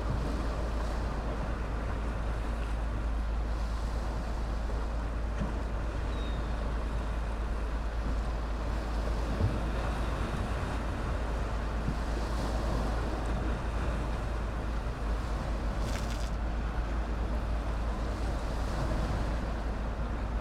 winter sound scape, waves, seagulls, fishing boats, masts
28 December 2012, ~10:00